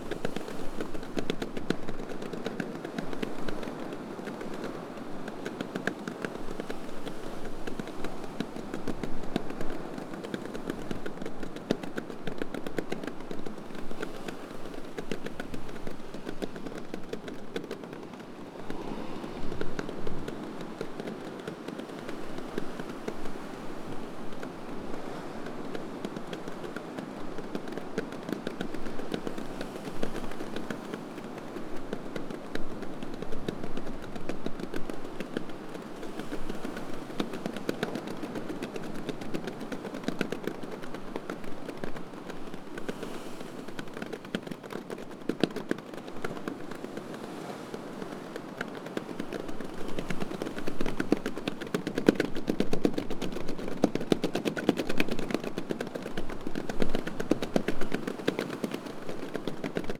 Akti Miaouli, Chania, Greece - flaping in the wind
cloth screen flapping in the strong wind (sony d50)